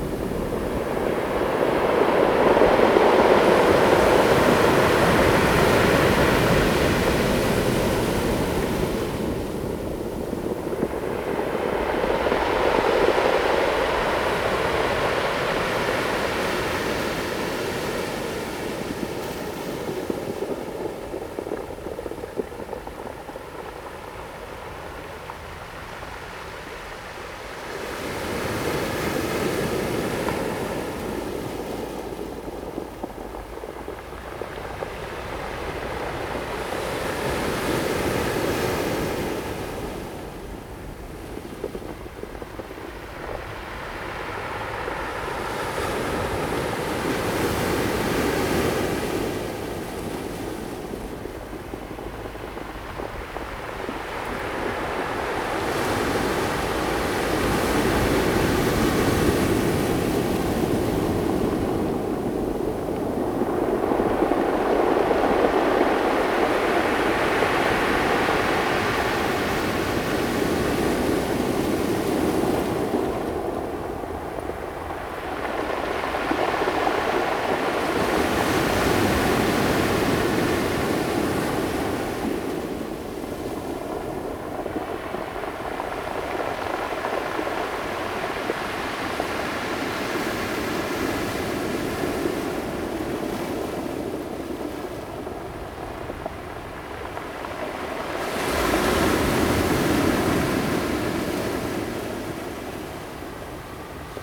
花蓮市, Taiwan - Waves
Waves sound
Zoom H2n MS+XY +Spatial Audio
Hualian City, 花蓮北濱外環道, 14 December 2016